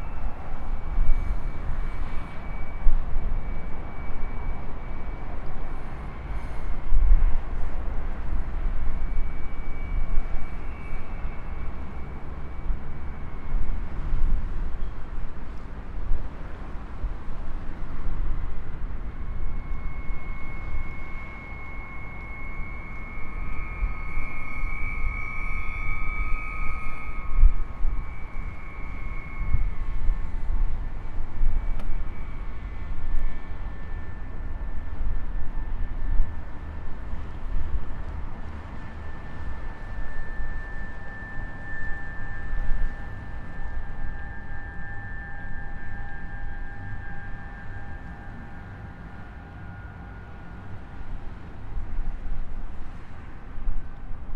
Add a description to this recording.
The public wind harps designed by Mark Garry with the traffic of the N4 passing between Sligo and Dublin.